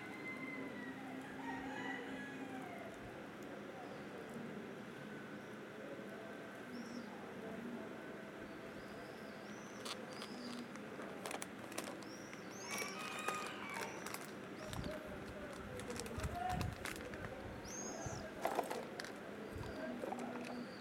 Khan al-Umdan, Acre - Alley, chickens Acre
Alley, Chickens, Birds